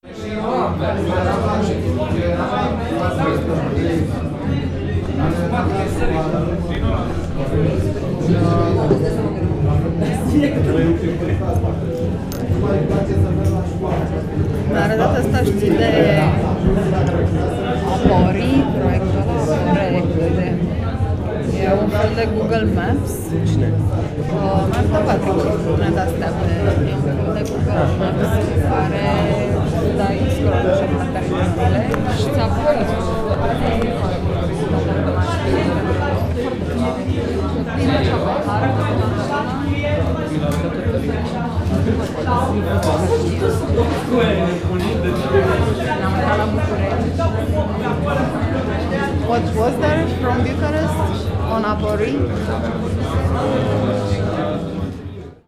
Club/Pub, Bucharest, Str. Academiei nr.19
people, talking, pub, atmosphere
Bucharest, Romania, 20 November 2011, 14:02